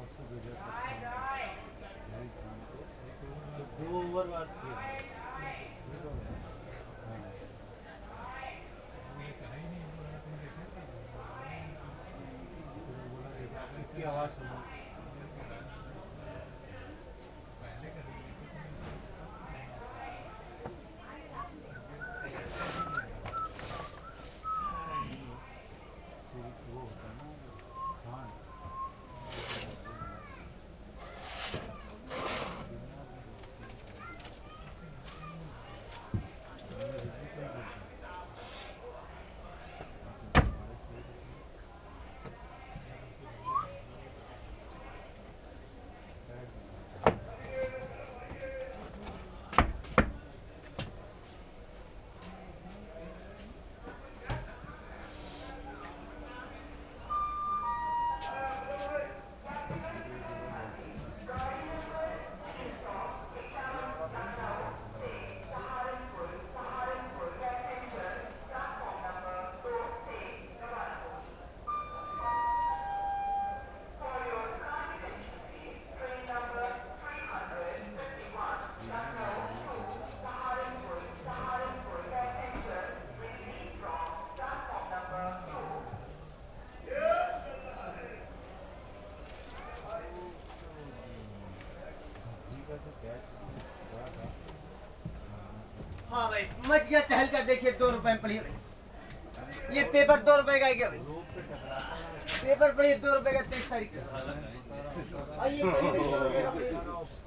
Varanasi Junction, maa surge balika intermediate collage, Railwayganj Colony, Varanasi, Uttar Pradesh, India - train leaving Varanassi
Varanassi Junction train station, waiting in train to leave